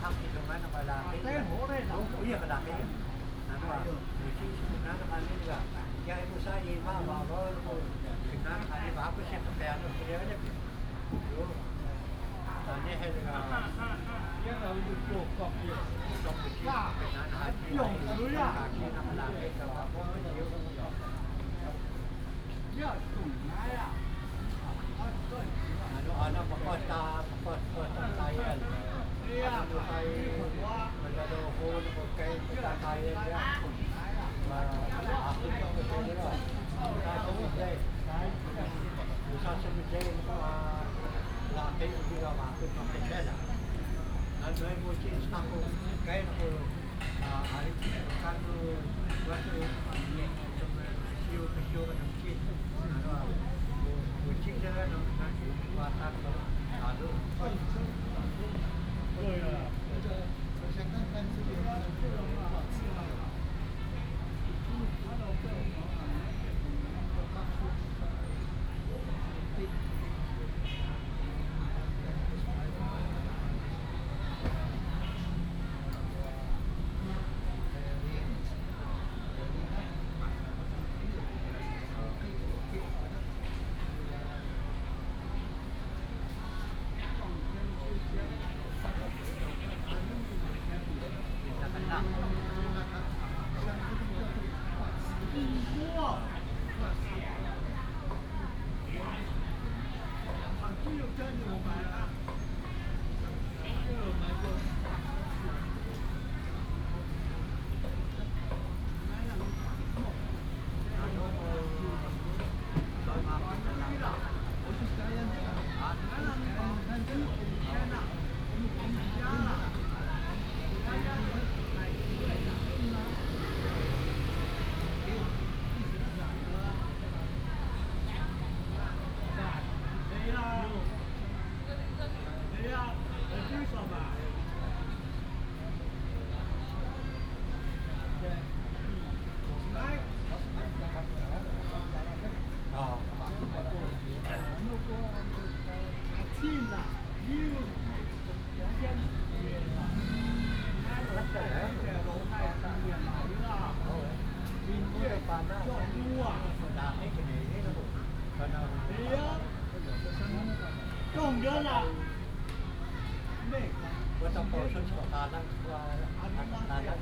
in the park, Many old people, Use a variety of different languages in conversation
Zhudong Township, Hsinchu County, Taiwan, 17 January, 11:24